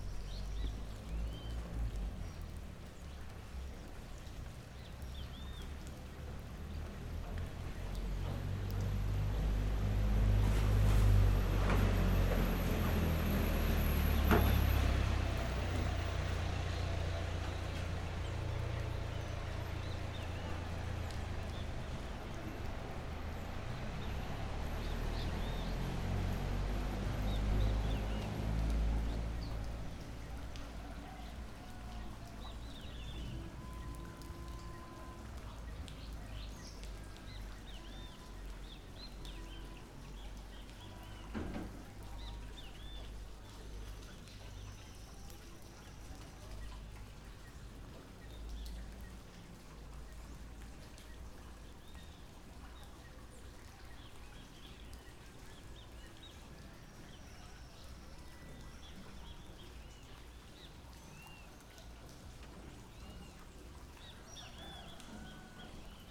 São Félix, Bahia, Brazil - De baixo da Ponte Dom Pedro I
Gravei este áudio numa manhã embaixo da ponte de metal que liga Cachoeira a São Félix, capitação entre a ponte e o rio.
Gravado com o gravador Tascam D40
por Ulisses Arthur
Atividade da disciplina de Sonorização, ministrada pela professora Marina Mapurunga, do curso de cinema e audiovisual da Universidade Federal do Recôncavo da Bahia (UFRB).